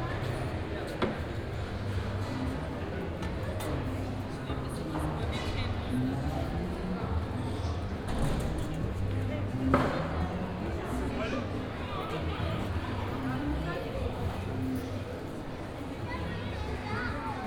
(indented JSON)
{
  "title": "Kreuzberg, Markthalle Neun - closing hours",
  "date": "2015-05-30 18:10:00",
  "description": "(binaural) most vendors packing their goods and closing the stands. the market getting slowly empty but still a bunch of people hanging around, having food, drinks, talking.",
  "latitude": "52.50",
  "longitude": "13.43",
  "altitude": "41",
  "timezone": "Europe/Berlin"
}